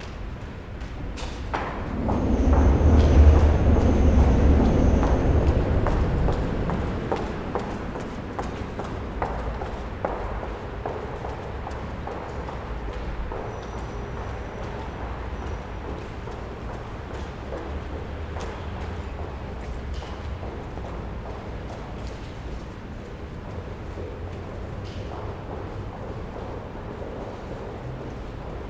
Kilinskiego Lodz, autor: Aleksandra Chciuk